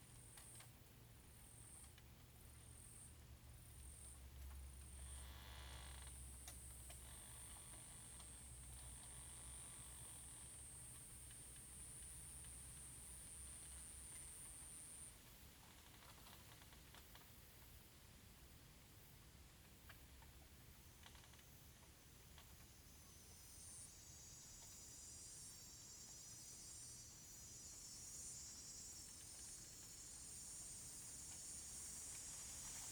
太巴塱國小, Fata'an - Bus station
In the side of the road, Traffic Sound, Small village, Bus station, Very hot weather
Zoom H2n MS+ XY